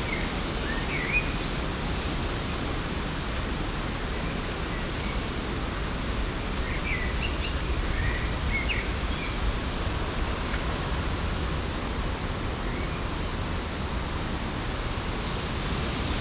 Haarzopf, Essen, Deutschland - essen, rumbachtal, ambler and dog, wind in the trees
Im Landschaftsschutzgebiet Rumbachtal. Ein telefonierender Spaziergänger mit Hund passiert, die Klänge von böigem Wind in den Bäumen.
In the nature protection zone Rumbachtal. An ambler with his dog speaking on his mobile passing by. Sounds of gushy wind in the trees.
Projekt - Stadtklang//: Hörorte - topographic field recordings and social ambiences
4 June 2014, 15:30